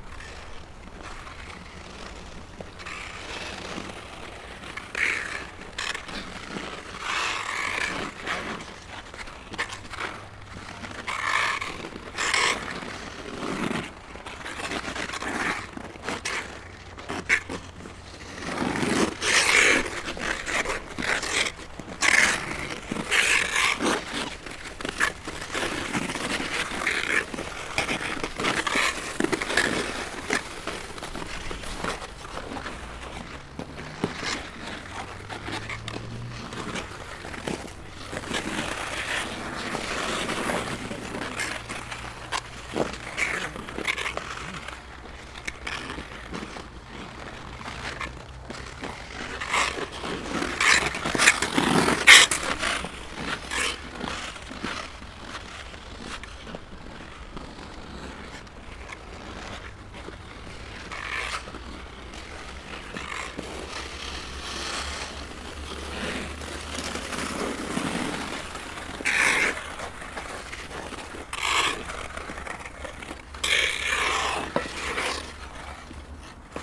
Olsztyn, Polska - Ice skating (3)
Ice skating from distance. Built-in mics Zoom H4n.